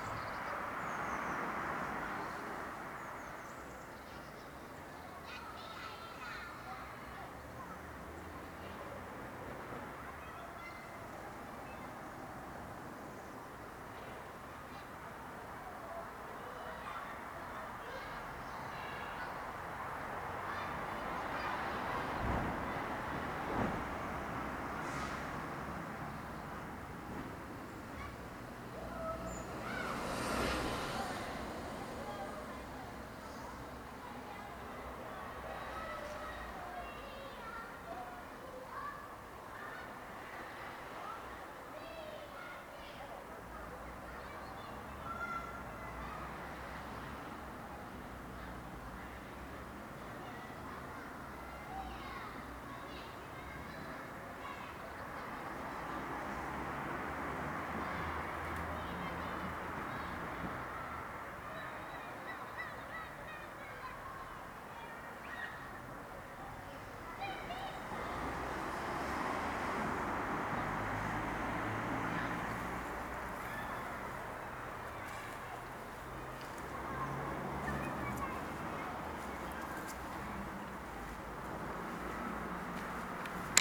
Novara, Italy - playing chidren
children playing in a closeby schoolyard.